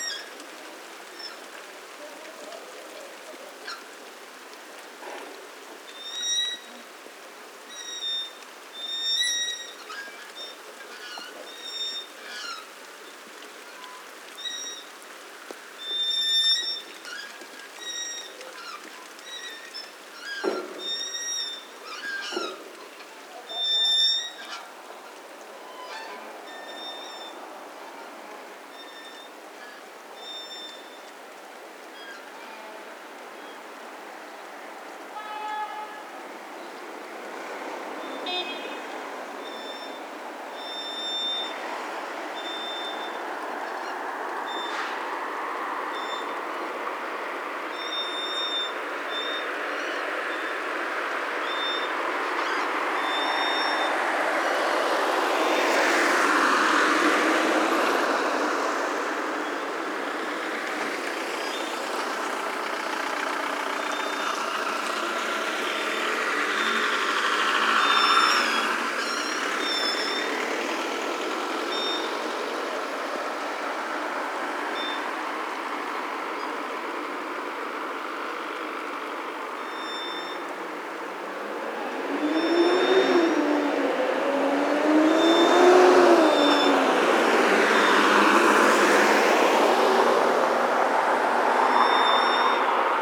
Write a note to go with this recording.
Tarde lluviosa de domingo a la entrada del pueblo.